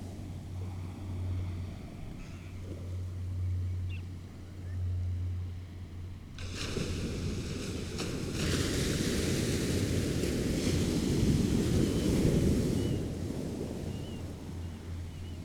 {
  "title": "Amble By the Sea, UK - Almost high tide ...",
  "date": "2016-11-16 15:30:00",
  "description": "Amble ... tide coming in ... early on a lady berates her dog for rolling on a dead seal ... waves hitting banks of sea weed ... bird calls from rock pipit ... black-headed gull ... starling ... redshank ... turnstone ... lavalier mics clipped to T bar on mini tripod ...",
  "latitude": "55.33",
  "longitude": "-1.56",
  "altitude": "1",
  "timezone": "Europe/London"
}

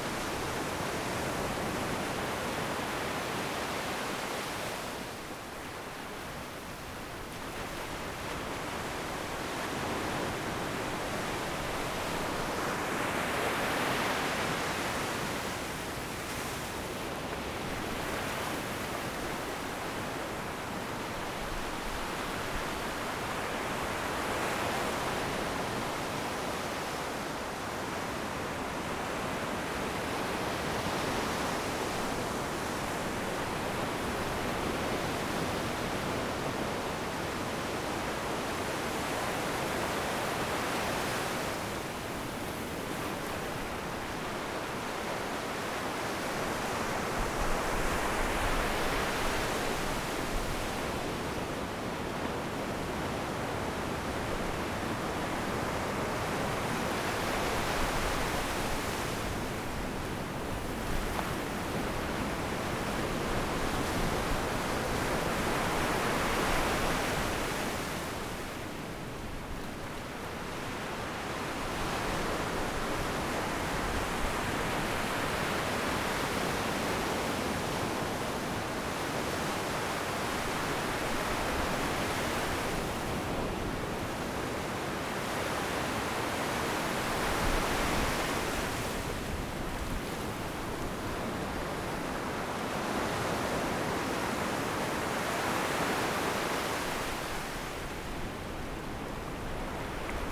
{
  "title": "Taitung City, Taiwan - Sound of the waves",
  "date": "2014-01-17 17:32:00",
  "description": "Sound of the waves, Zoom H6 M/S",
  "latitude": "22.75",
  "longitude": "121.16",
  "timezone": "Asia/Taipei"
}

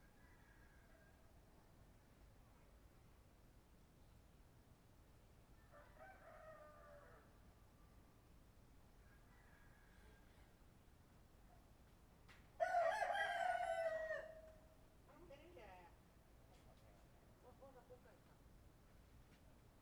{
  "title": "Shueilin Township, Yunlin - Neighbor's voice",
  "date": "2014-02-01 05:27:00",
  "description": "On the second floor, Neighbor's voice, Early in the morning, Chicken sounds, Zoom H6 M/S",
  "latitude": "23.54",
  "longitude": "120.22",
  "altitude": "6",
  "timezone": "Asia/Taipei"
}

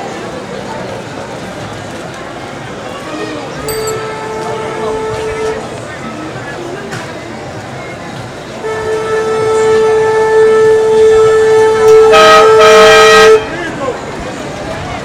{
  "title": "Santiago de Cuba, calle Enramada and Felix Pena",
  "date": "2003-12-06 10:29:00",
  "latitude": "20.02",
  "longitude": "-75.83",
  "altitude": "37",
  "timezone": "America/Havana"
}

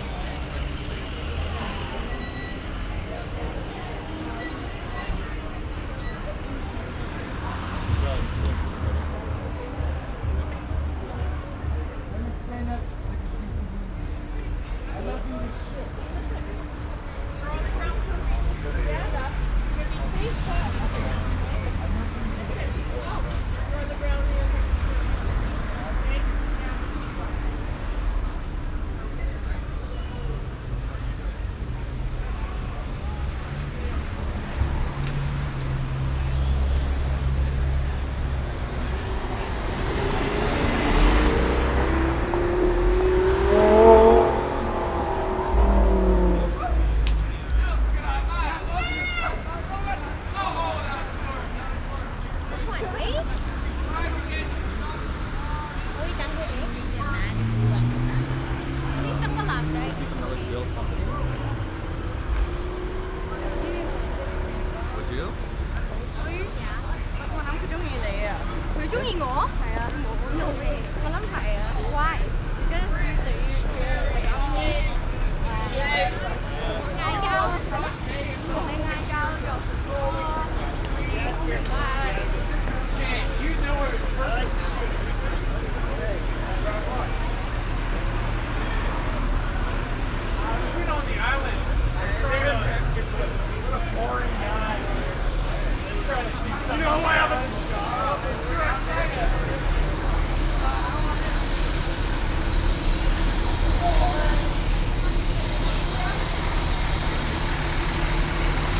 {
  "title": "vancouver, granville street, friday night",
  "description": "friday night in downtown, policeman arresting a drunk man, sirens, people passing, by a speeking car\nsoundmap international\nsocial ambiences/ listen to the people - in & outdoor nearfield recordings",
  "latitude": "49.28",
  "longitude": "-123.13",
  "altitude": "31",
  "timezone": "GMT+1"
}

